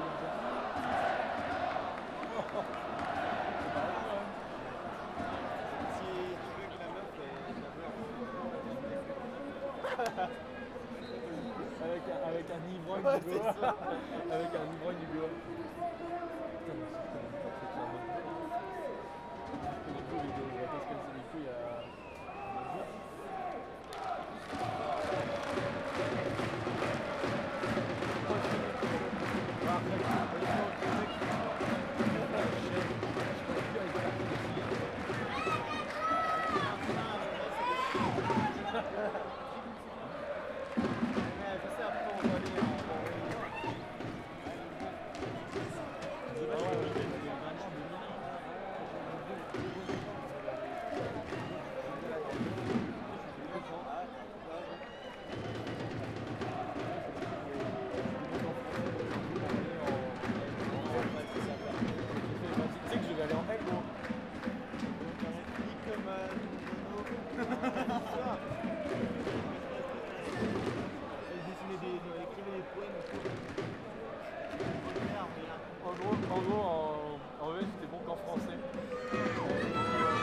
Rue du Manoir, Guingamp, France - Ambiance au stade du Roudourou à Guingamp
Le stade du Roudouroù accueille la dernière rencontre du championnat D2, En Avant de Guingamp contre Le Havre. Enregistrement zoom H4.
France métropolitaine, France, 14 May 2022